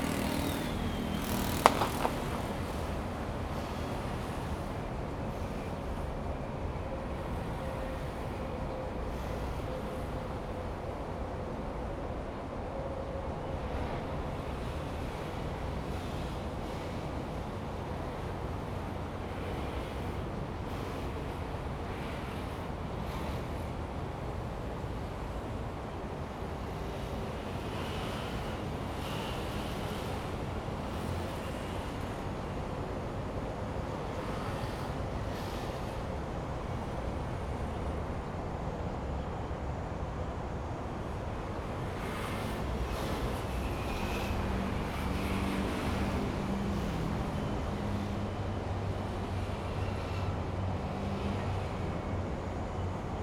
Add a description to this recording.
Traffic sound, The train runs through, Next to the tracks, Zoom H2n MS+XY